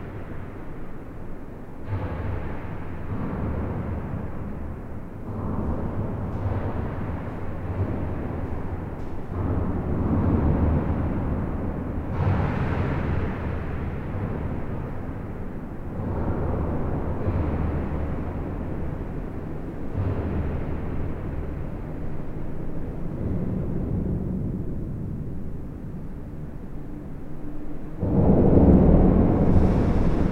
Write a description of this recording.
This viaduct is one of the more important road equipment in all Belgium. It's an enormous metallic viaduct on an highway crossing the Mass / Meuse river. All internal structure is hollowed. This recording is made inside the box girder bridge, as you can walk inside the bridge as in a metallic tunnel. Trucks make enormous explosions. Infrasounds are gigantic and make effects on the human body. It was very hard to record as everything terribly vibrate, but an accomplishment. Flavien Gillié adviced me and thanks to him.